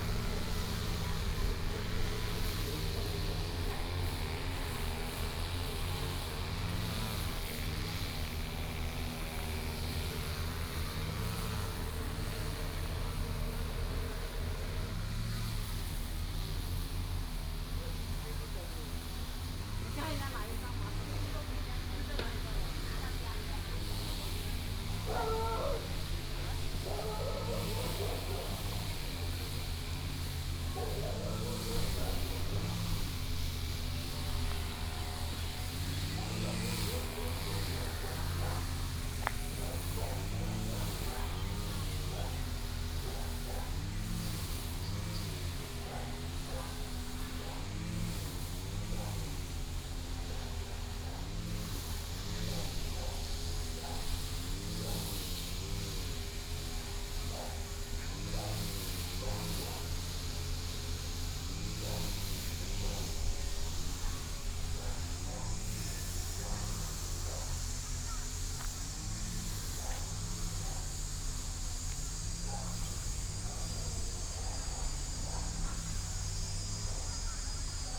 角板山公園, 桃園市復興區 - walking in the park
in the park, Lawn mower, Traffic sound, Tourists, Dog, The plane flew through